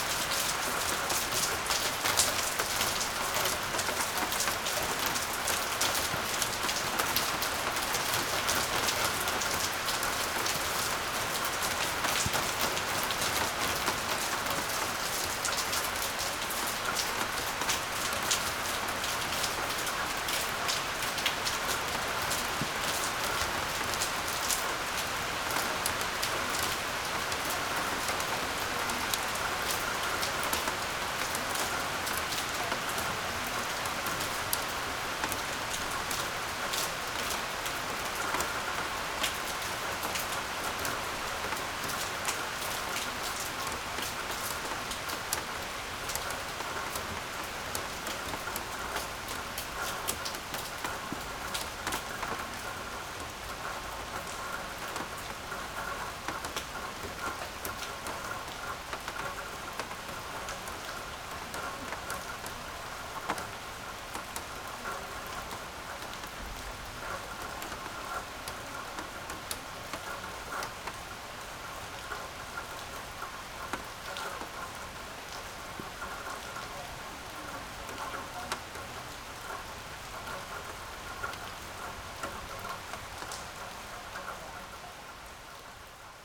Berlin Bürknerstr., backyard window - strong straight rain
a strange straight and relatively strong rain is falling
(Sony PCM D50)